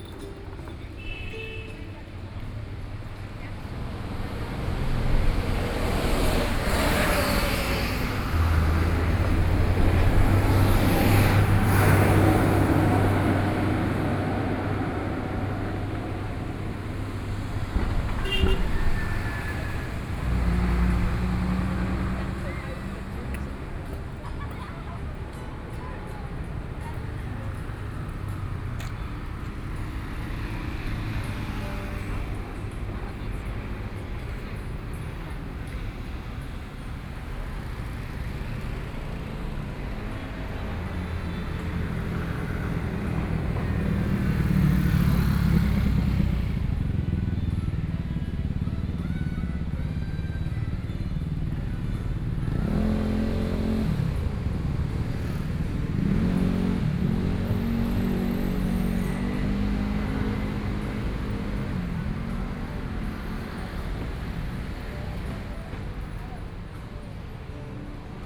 Bihu Park, Taipei City - Walk in the park
Walk in the park, Traffic Sound
Binaural recordings